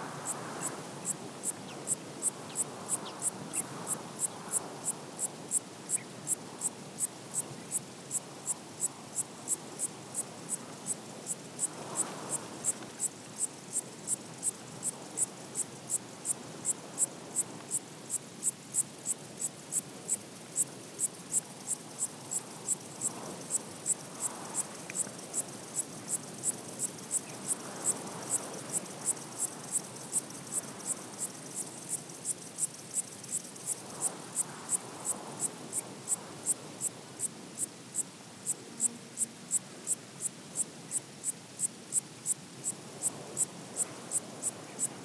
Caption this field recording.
Into a volcanoes mountains landscape, wind is powerfully blowing into the tall grass. A small locust is singing.